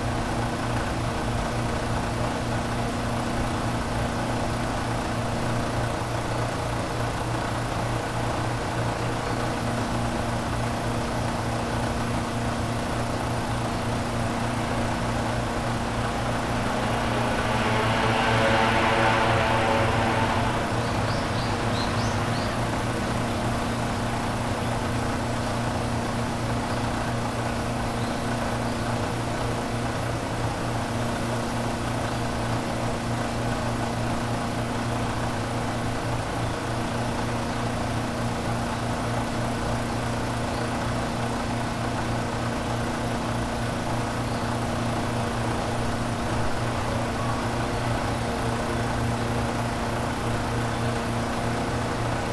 Osaka, Shitennoji Temple, Gokuraku-jodo Garden - water pump
a water pump chugging away in the peaceful Gokuraku-jodo Garden. Birds trying to break through with their chirps.
近畿 (Kinki Region), 日本 (Japan), 31 March 2013